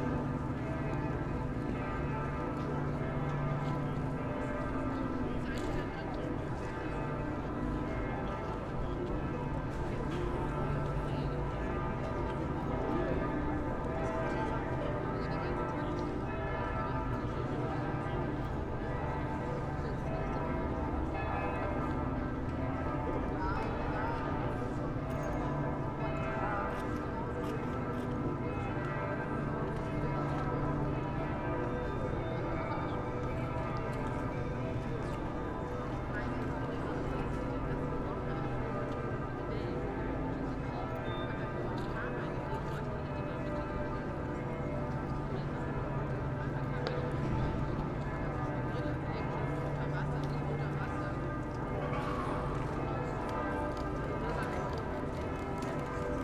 Humboldt Forum / Stadtschloss, Berlin, Deutschland - inner yard ambience /w church bells of Berliner Dom
soundscape within the inner yard of the new Berliner Stadtschloss, city castle. Churchbells of the cathedral opposite, sounds of the restaurant, among others, various reflections
(Sony PCM D50, Primo EM272)